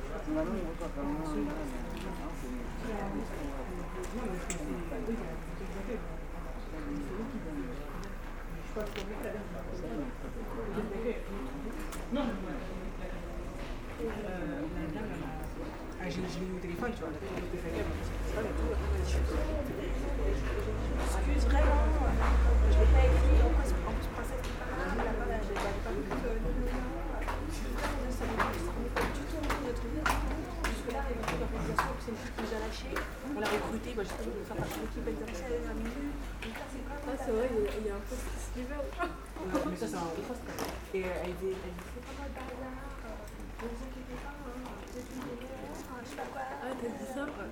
Vernon, France - Vernon station
Taking the train to Paris in the Vernon station. A first train to Mantes-La-Jolie arrives, and after the train to Paris Saint-Lazare arrives.
September 2016